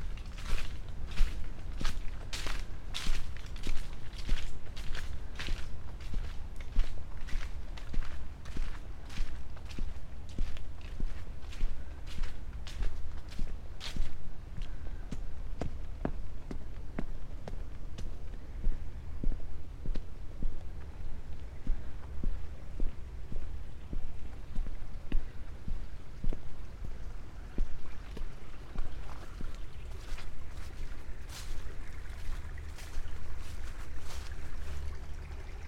walk, park, maribor - flux, full moon
round walk in the city park near midnight with full moon rising, variety of fallen leaves, fluid ambiance with rivulets due to intense rainy day - part 1